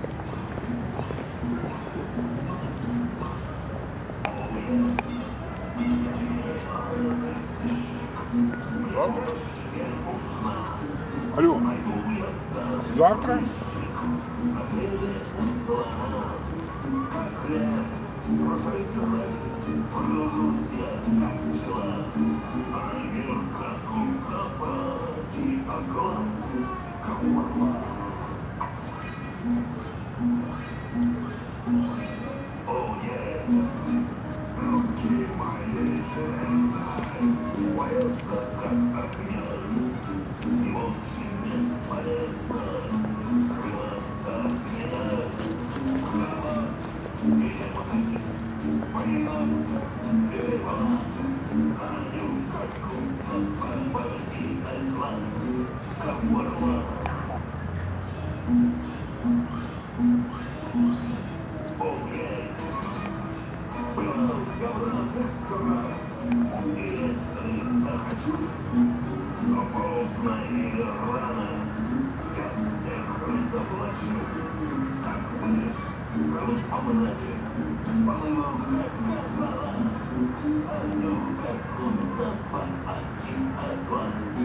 Yanka Kupala Park, Vulitsa Yanki Kupaly, Minsk, Biélorussie - an old song
A saxophone and a tv chatting.
Minsk, Belarus, 20 October